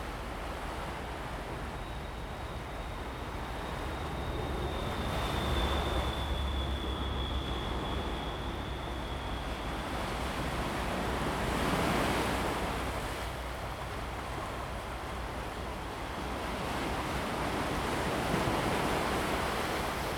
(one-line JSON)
{"title": "縱貫公路, 獅子頭 Fangshan Township - On the coast", "date": "2018-03-28 04:26:00", "description": "On the coast, Sound of the waves, Traffic sound, Early morning at the seaside\nZoom H2n MS+XY", "latitude": "22.23", "longitude": "120.67", "altitude": "7", "timezone": "Asia/Taipei"}